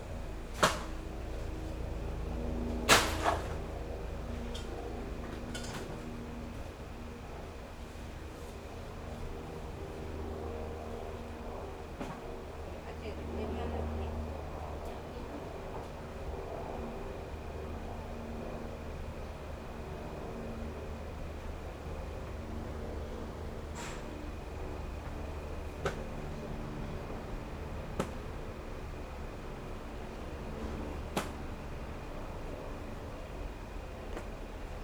Aircraft flying through, Rode NT4+Zoom H4n